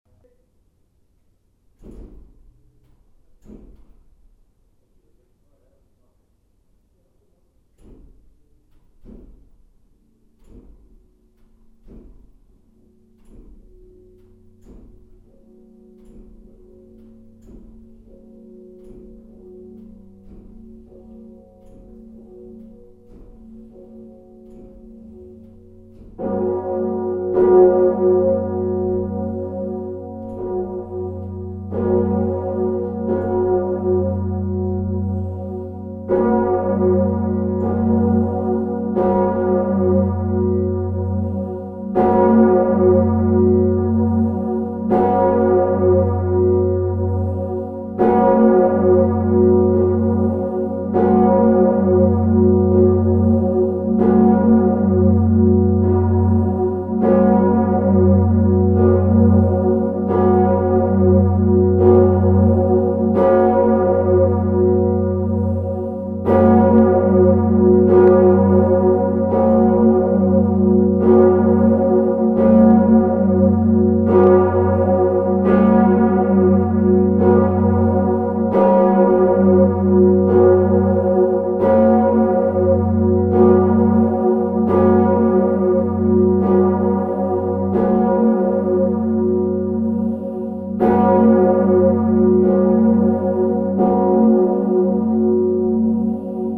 Malines, Belgique - Mechelen big bell
The eight tons bell of the Mechelen cathedral, recorded solo in the tower. The bell comes from the bellfounder ALJ Van Aerschodt. It's called Salvator, and date is 1844.
Onder-Den-Toren, Mechelen, Belgium, 6 August 2014